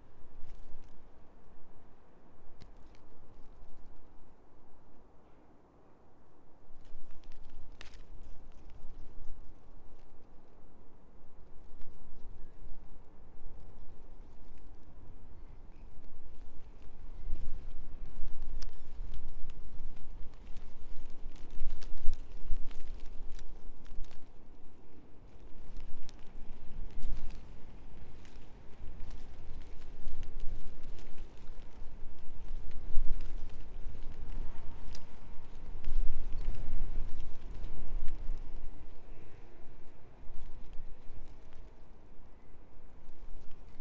This is one of my first experiments recording with a Sound Devices; the set-up is Sound Devices 702 plus Audio Technica BP4029 stereo microphone. I am not sure if I had the channels linked correctly, as I had to boost the left a little in post-production to balance left and right channels. Haren is a very quiet district on the outskirts of Brussels, and the day we visited was very windy. There are many trains passing through, and lots of quiet green pockets of land. I was standing in a sheltered spot beside the wall that surrounds St Catherine's Church. I could hear very close by, the sounds of birds and the wind moving through the ivy; and more distantly, the trains passing and traffic moving gently in the nearby square. It is not my favourite recording ever; it's a little windy in places. However it does document a careful listening experience in what was to me a completely foreign land and sound scape.